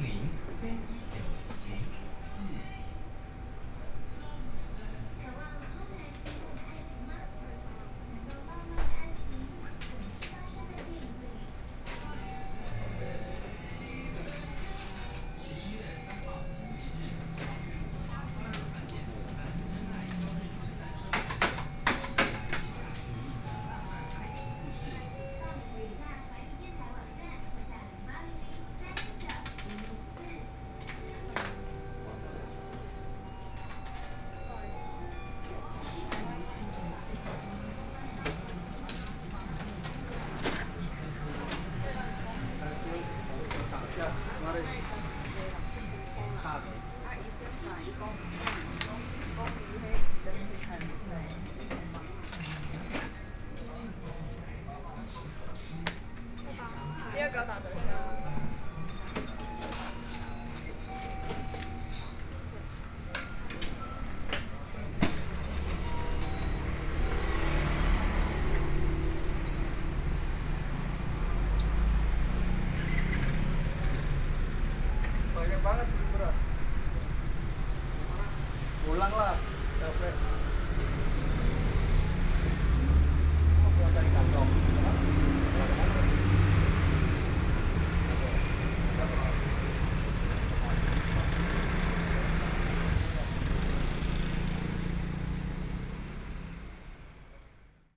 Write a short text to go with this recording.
walking in the street, Convenience stores, Zoom H4n+ Soundman OKM II